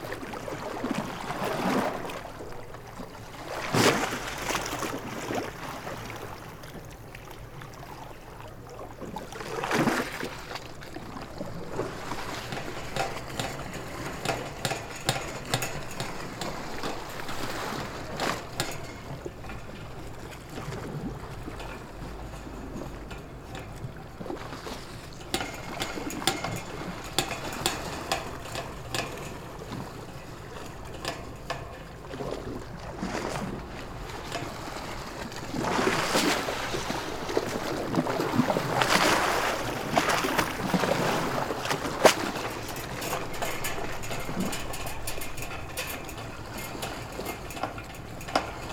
Lisbon, Portugal - Waves - World Listening Day 2015 - H2O
World Listening Day 2015 - waves splashing on water near Cais do Sodré harbour, Lisbon. Recorded in MS stereo with a Shure VP88 and a Tascam dr70-d.